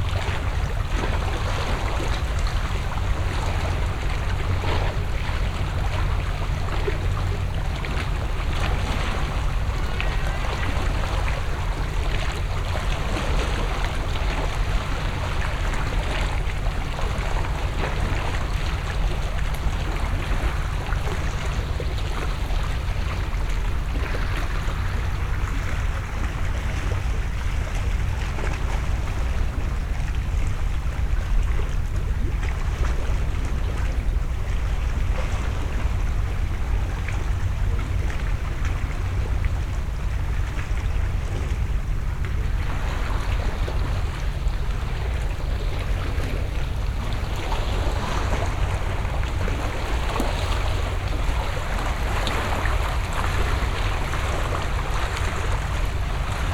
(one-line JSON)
{"title": "seaside sounds by the abandoned sanatorium, Heybeliada", "date": "2010-03-01 16:59:00", "description": "ambiance at the seaside by an abandoned sanatorium", "latitude": "40.87", "longitude": "29.09", "timezone": "Europe/Tallinn"}